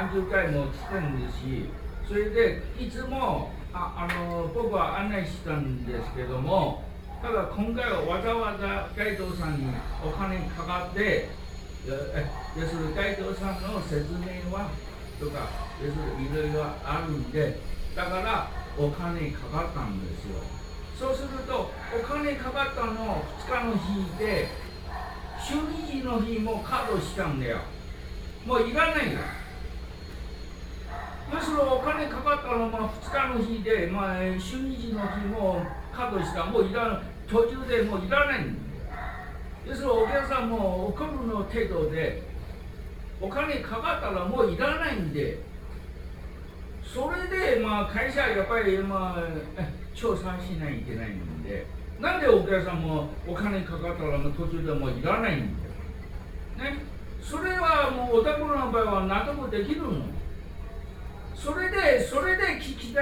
Ln., Gangshan Rd., Beitou Dist., Taipei City - Japanese conversation
On the phone in the room, and use of Japanese conversation, Binaural recordings, Sony PCM D50 + Soundman OKM II
Taipei City, Taiwan, 28 November 2012, ~14:00